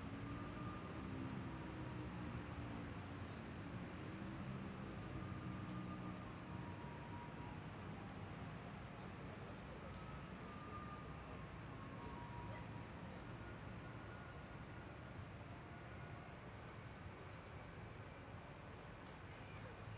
臺北市立兒童育樂中心, Taipei City - Aircraft flying through
Aircraft flying through, Dogs barking, Traffic Sound, People walking in the park
Please turn up the volume a little
Zoom H6, M/S
Zhongshan District, Taipei City, Taiwan, 17 February 2014, 20:28